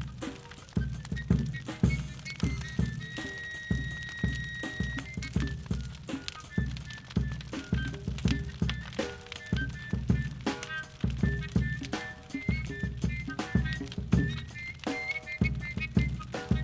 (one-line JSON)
{"title": "Arkhangelsk Region, Russia - Festival TAYBOLA live drums + big fire", "date": "2013-07-20 02:40:00", "description": "open air festival TAYBOLA: live drums + big fire\nRecorded on Rode NTG-2 + Zoom H4n.\nфестиваль ТАЙБОЛА: живые барабаны и большой костер, на берегу моря", "latitude": "64.55", "longitude": "39.52", "timezone": "Europe/Moscow"}